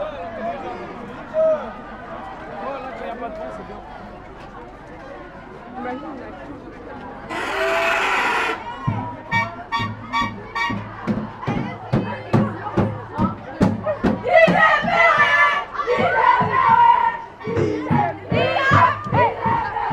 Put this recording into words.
In aim to animate the K8strax, a big scout race, we ordered a complete train, from Ottignies to Mons. 1250 of our scouts arrive in the Mons station. We are doing noise and a lot of passengers are desperate ! During this morning, there's very-very much wind, I had to protect the microphones with strong pop filter.